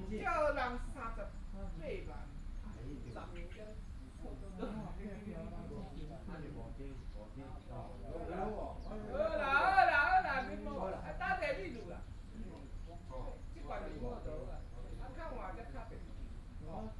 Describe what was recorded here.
Riverside Park, A group of people to chat, Hot weather, Traffic Sound